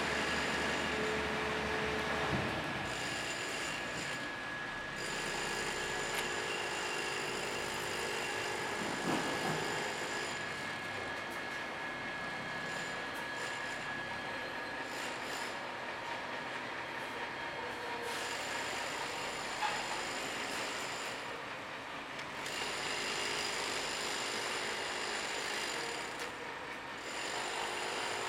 L'Aquila, Piazza Palazzo - 2017-05-29 08-Pzza Palazzo
29 May 2017, 15:00